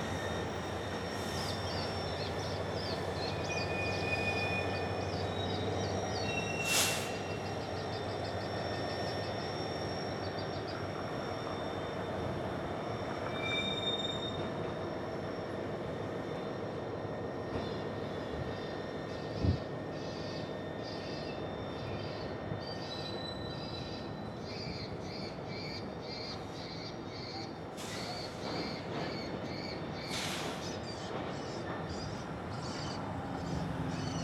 Recorded on the St Anthony Parkway Bridge above the Northtown Rail Yard. Several trains can be heard. Some are stopping, some are passing through, and one down the line was forming a train. Bridge vehicle traffic and wildlife can also be heard.